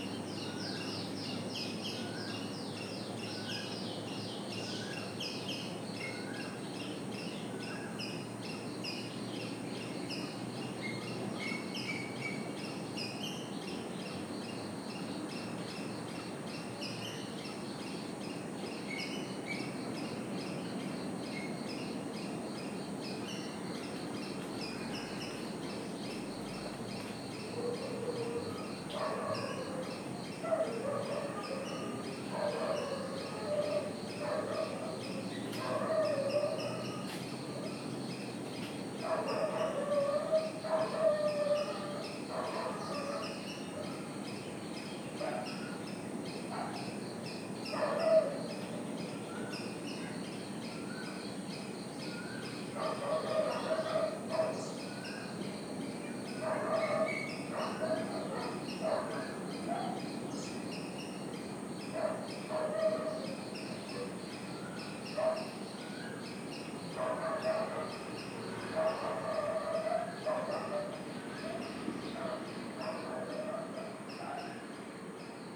Evening recording on a more quiet south part of Goa